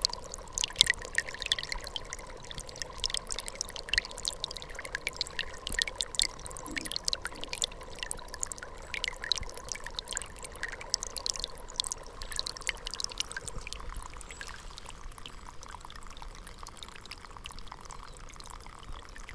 Faigne - Réserve Naturelle du Grand Ventron, Cornimont, France